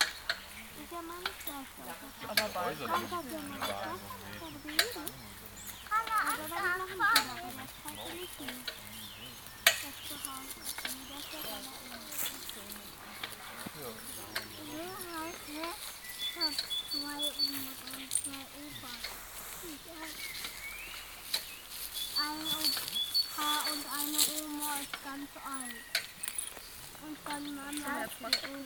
chorin, schorfheide - picnic on a warm sunday in spring
05.04.2009 15:00 picnic at the countryside, children talking.